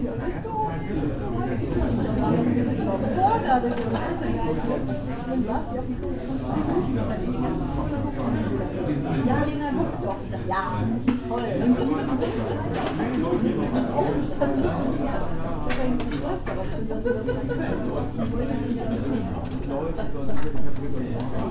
reimanns eck, lister meile 26, 30161 hannover